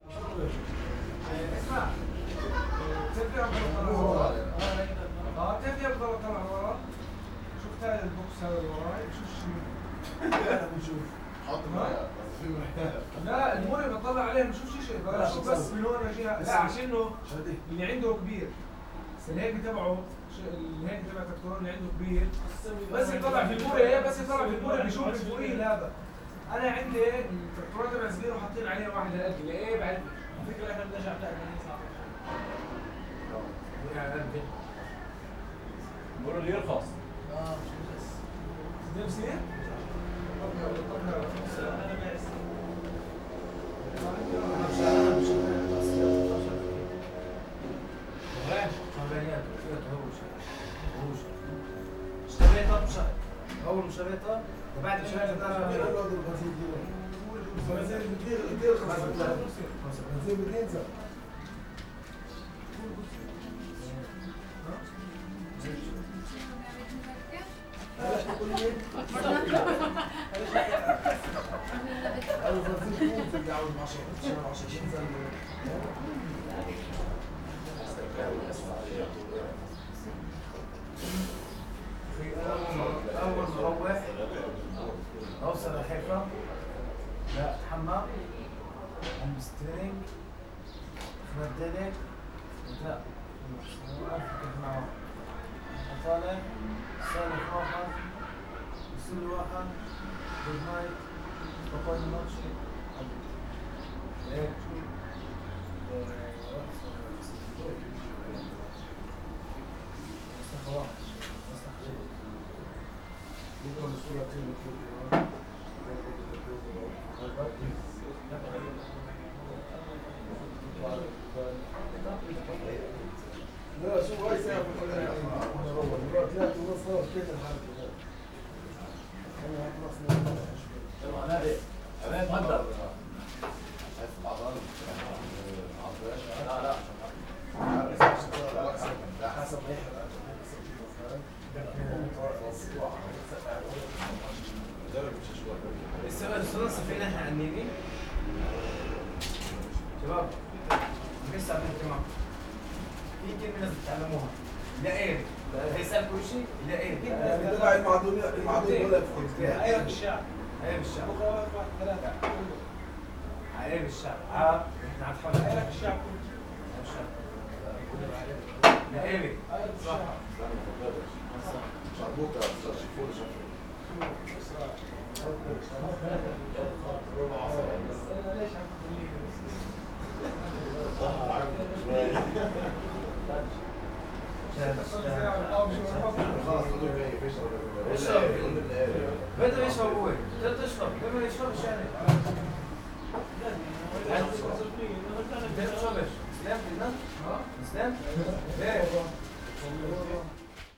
Malia, Horizon Beach Hotel - conversation in foreign language
a few guys talking in a language i think was Arabic. on a porch in front of their hotel room under my balcony. their voices recorded as if they were in an adjacent room but the distance was much more.